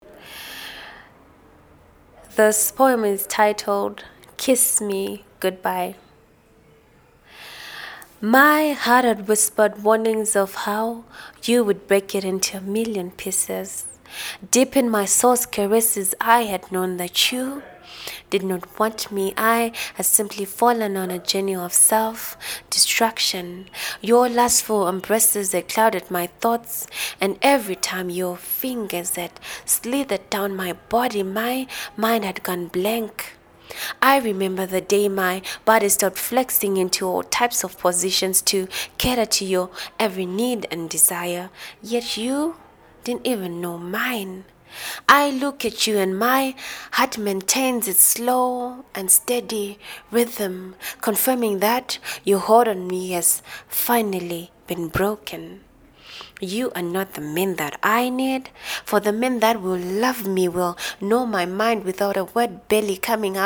The Book Cafe, Harare, Zimbabwe - More Blessings, “Kiss me good-bye…”
More Blessings, “Kiss me good-bye…”
more poems from More Blessings and Upmost at :
13 October 2012, 16:50